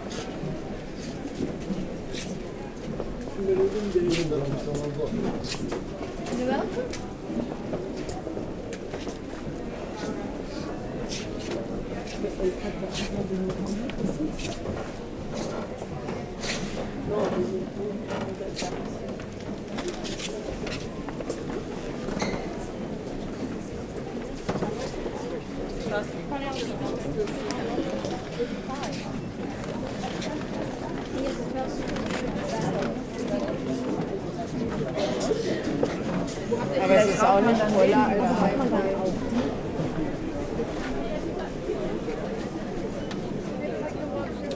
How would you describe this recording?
Boekhandel Dominicanen. A desecrated church is transformed into a vast library and in the apse, to a bar. It's rare enough (and what a decay) to highlight the sound of this kind of place. Walk in the establishment, elsewhere diehard. In 2008, the bookstore was ranked first in the ranking of the ten most beautiful bookstore in the world.